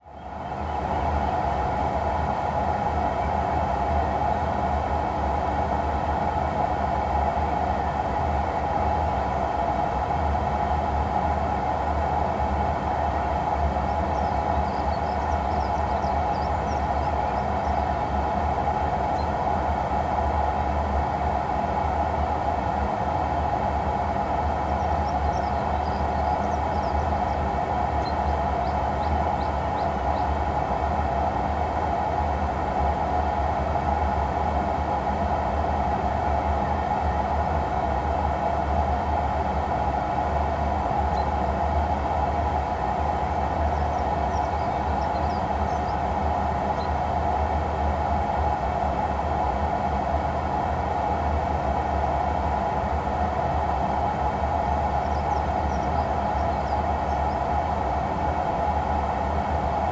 opencast / Tagebau Hambach, near Elsdorf, Germany - water pipe, drainage
lignite opencast Tagebau Hambach, one of the many water pipes for ground water removal around the pit. From Wikipedia:
The Tagebau Hambach is a large open-pit mine (German: Tagebau) in Niederzier and Elsdorf, North-Rhine Westphalia, Germany. It is operated by RWE and used for mining lignite. Begun in 1978, the mine currently has a size of 33.89 km² and is planned to eventually have a size of 85 km². It is the deepest open pit mine with respect to sea level, where the ground of the pit is 293 metres (961 ft) below sea level.
(Sony PCM D50)
Nordrhein-Westfalen, Deutschland, 2 July